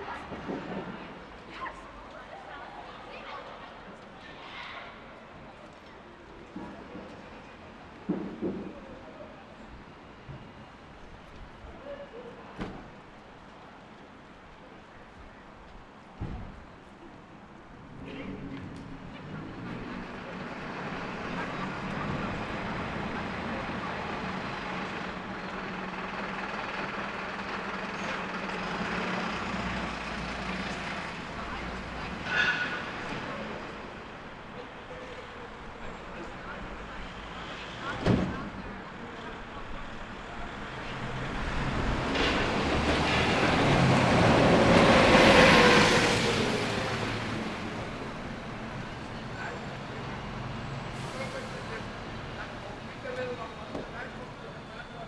Donegall Place, Belfast, UK - Queens Arcade
Recording in a common space of shoppers, there are still buses, much fewer people walking in the space, and essential shops closing for the evening. This is five days after the new Lockdown 2 in Belfast started.